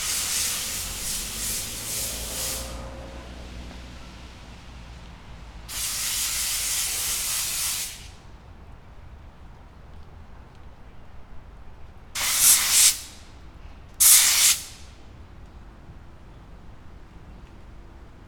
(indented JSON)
{
  "title": "Poznan Jana III Sobieskiego residential estate - man washing a car with power washer",
  "date": "2017-10-12 12:26:00",
  "description": "Man washing a car with a power washer. Swishes of the pressurized water reverberate of the walls of the 12 floor buildings nearby. (sony d50)",
  "latitude": "52.46",
  "longitude": "16.91",
  "altitude": "100",
  "timezone": "Europe/Warsaw"
}